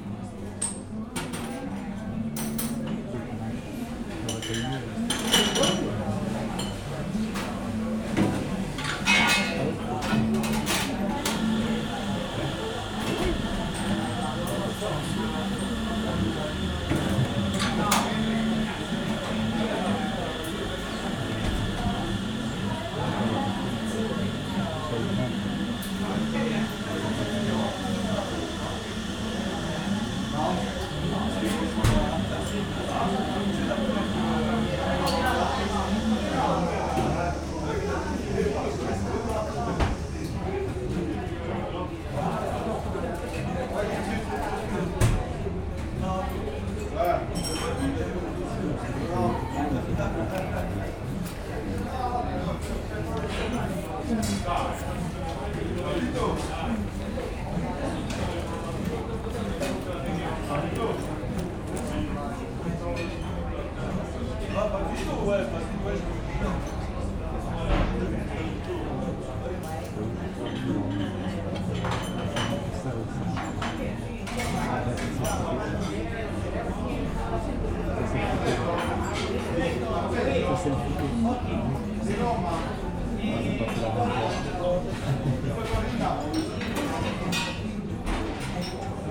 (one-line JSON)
{"title": "Paris, France - Paris café", "date": "2019-01-02 15:00:00", "description": "Traveling through Paris, we made a stop into a quiet bar. Calm sounds of tourists ans the barman making some coffee.", "latitude": "48.85", "longitude": "2.35", "altitude": "35", "timezone": "Europe/Paris"}